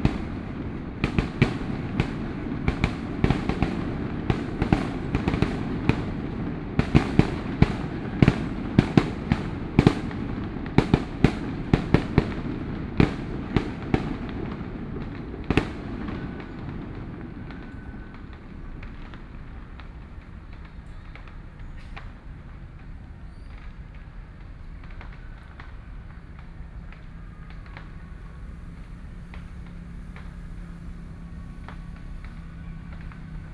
in the Park, Birdsong, Traditional temple festivals, Fireworks sound, Traffic Sound
Sony PCM D50+ Soundman OKM II